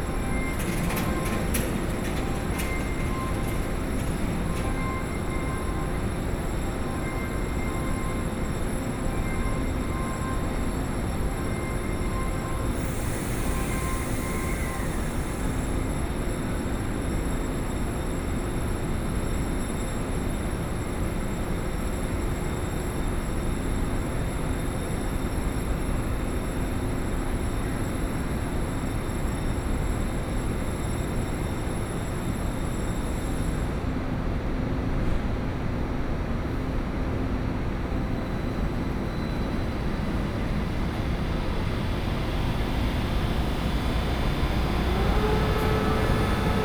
Taipei Main Station - the platform
On the platform waiting for the train, Station broadcast messages, Train Arrival and Departure, Sony PCM D50 + Soundman OKM II